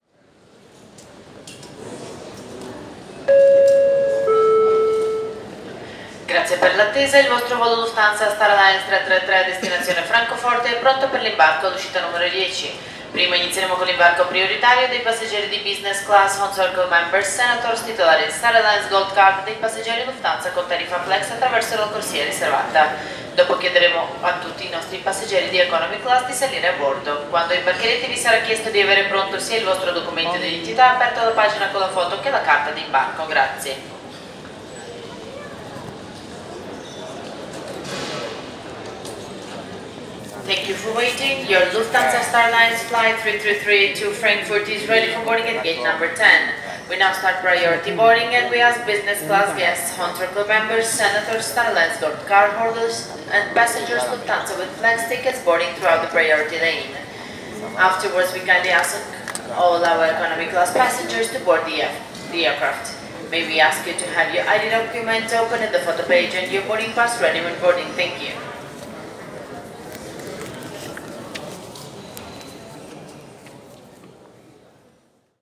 Terminal, Viale Galileo Galilei, Venezia VE, Italia - Venice Marco Polo
Venice Marco Polo Airport: flight announcement for Frankfurt.